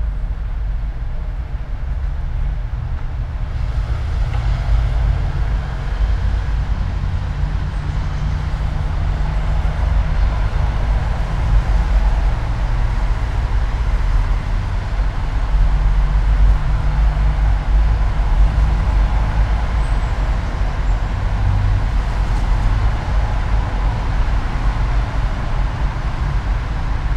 all the mornings of the ... - aug 28 2013 wednesday 07:30
Maribor, Slovenia, 28 August, 07:30